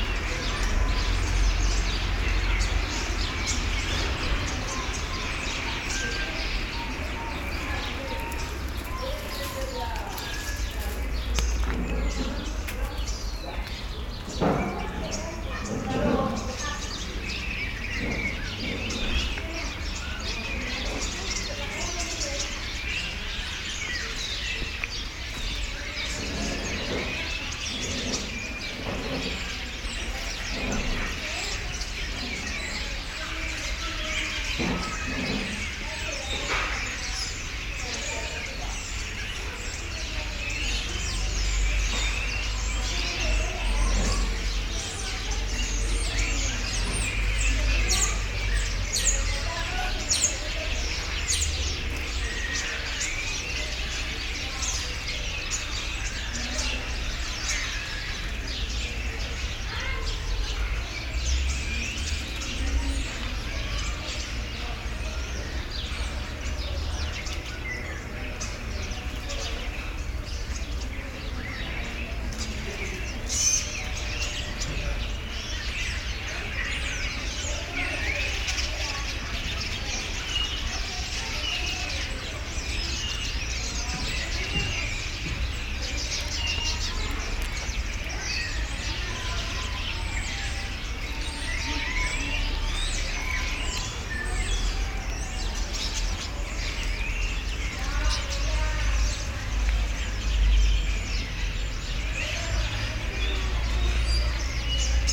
{"title": "Colonia Juan XXIII, Alicante, Spain - (07 BI) Evening Birds", "date": "2016-11-03 18:59:00", "description": "Binaural recording of evening birds at Colonia San Juan XXIII.\nRecorded with Soundman OKM on Zoom H2n", "latitude": "38.37", "longitude": "-0.48", "altitude": "88", "timezone": "Europe/Madrid"}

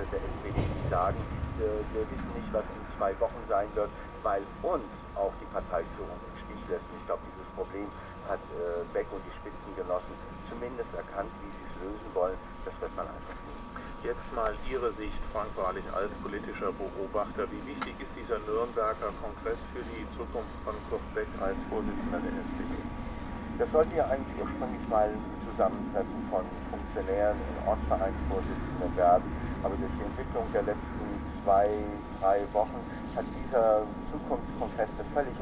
{"title": "public open air swimming pool - Alf, public open air swimming pool", "description": "radio playing outside, cheap loudspeakers, may 31, 2008 - Project: \"hasenbrot - a private sound diary\"", "latitude": "50.05", "longitude": "7.11", "altitude": "98", "timezone": "GMT+1"}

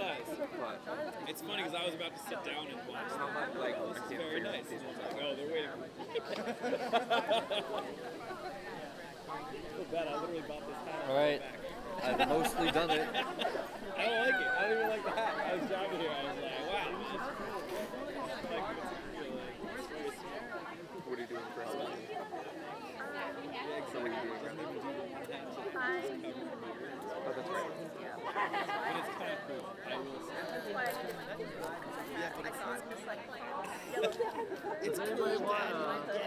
{"title": "New Paltz, NY, USA - Old Main Quad", "date": "2016-10-28 16:00:00", "description": "The Old Main Quad at SUNY New Paltz is a place where many students and the public will relax and enjoy the outdoors. This recording was taken during a student run organization function called, \"Fall Fest\". The recording was taken using a Snowball condenser microphone with a sock over top to cut the wind. It was edited using Garage Band on a MacBook Pro.", "latitude": "41.74", "longitude": "-74.08", "altitude": "103", "timezone": "America/New_York"}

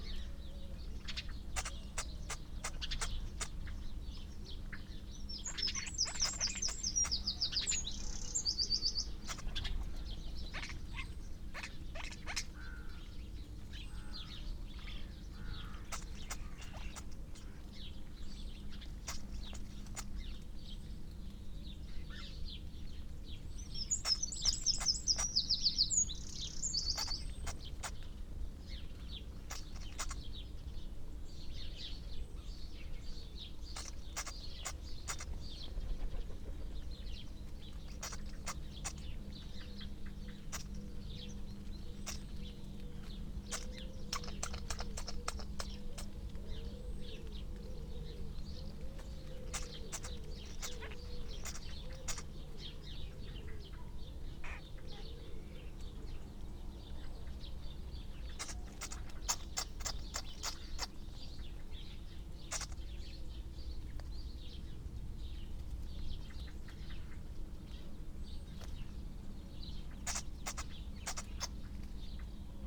{"title": "Chapel Fields, Helperthorpe, Malton, UK - starling gathering soundscape ...", "date": "2019-08-08 05:30:00", "description": "starling gathering soundscape ... SASS on the floor facing skywards under hedge where the birds accumulate ... whistles ... clicks ... creaks ... purrs ... grating ... dry rolling and rippling calls and song from the starlings ... bird calls ... song ... from ... collared dove ... wood pigeon ... wren ... crow ... magpie ... dunnock ... background noise from traffic etc ...", "latitude": "54.12", "longitude": "-0.54", "altitude": "77", "timezone": "Europe/London"}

